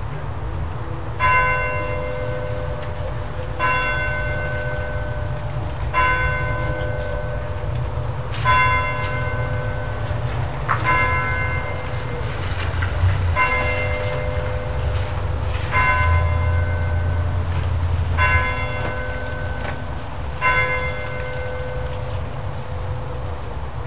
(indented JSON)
{
  "title": "Outside The Church Inn, Prestwich, Manchester - Church Bell/Pub/Rain Prestwich",
  "date": "2011-07-17 18:00:00",
  "description": "Outside The Church Inn pub. The church next door strikes 6pm (although how three sets of three rings followed by nine rings signifies 6pm I'm not sure!) Also a very rare, for these parts, an American accent from a passer by can be heard. Pub noise from inside the pub is also heard, it was pouring with rain.",
  "latitude": "53.53",
  "longitude": "-2.29",
  "altitude": "87",
  "timezone": "Europe/London"
}